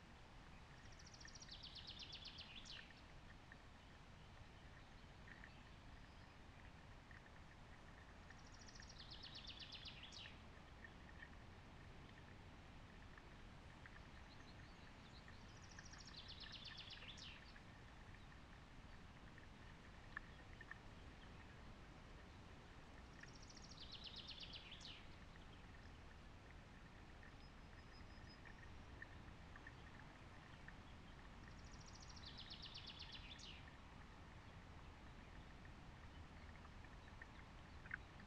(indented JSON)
{
  "title": "Lithuania, lake Luknas",
  "date": "2017-07-03 15:20:00",
  "description": "multitrack recording from the footbridge: soundscape mixed with hydrophone",
  "latitude": "55.57",
  "longitude": "25.53",
  "altitude": "91",
  "timezone": "Europe/Vilnius"
}